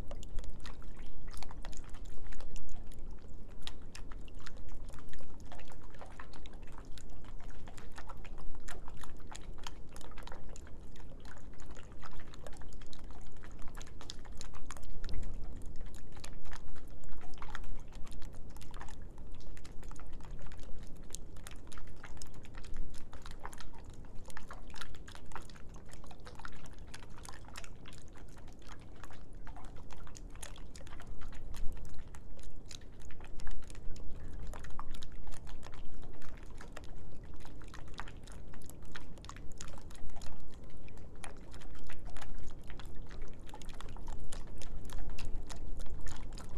there are a few meters of non frozen water under the bridge
Griūtys, Lithuania, 1 March 2019, 15:30